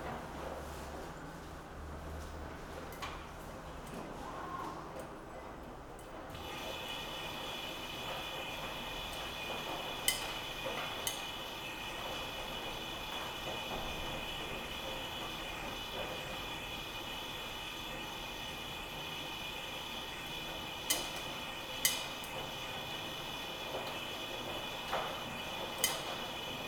Berlin, Ohlauer Str., laundry, 1st floor, ambience
(Sony PCM D50)